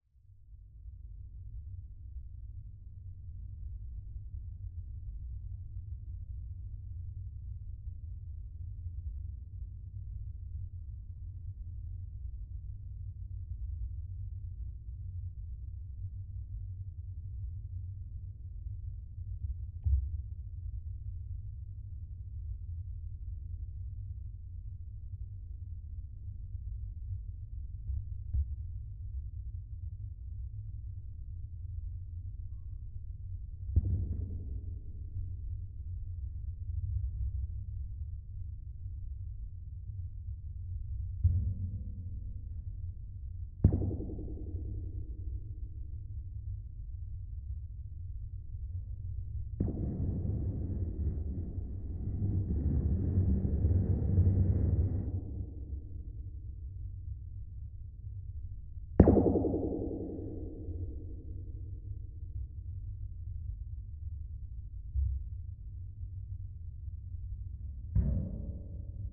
Kattendijkdok-Oostkaai, Antwerpen, Belgium - Aluminium sheet wall
Sounds of an aluminium sheet wall when hit with fingers.
Recorded with LOM Geofon going to a Zoom H4n.
Vlaanderen, België / Belgique / Belgien, 21 May 2021